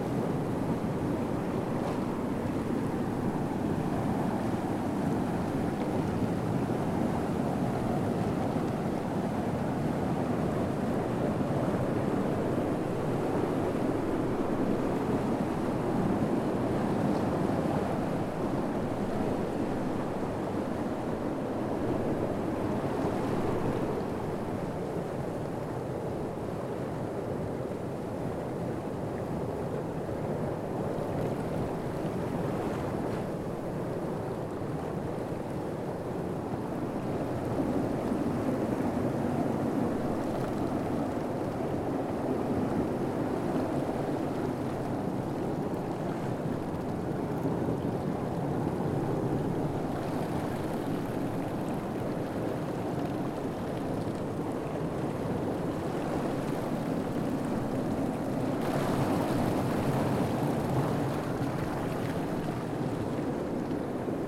Solkan, Slovenija - Reka Soča
A windy, post-rainy take of the Soča river.
Recorded with Zoom H5 + AKG C568 B
Solkan, Slovenia, 7 June, ~17:00